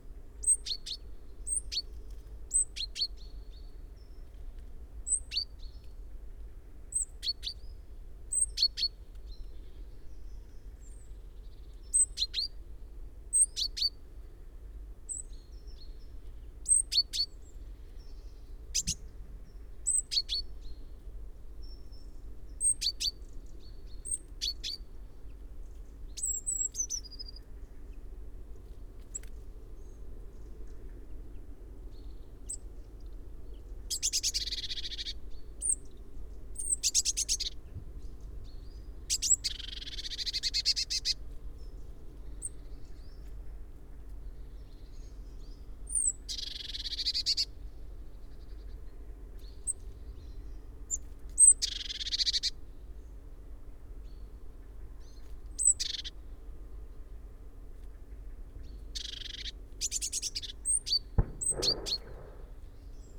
Luttons, UK - blue tit foraging ...
Blue tit foraging ...variety of calls in a variety of pitches ... bird calls from great tit ... blackbird ... wood pigeon ... lavalier mics in parabolic ... background noise ... including a bird scarer ...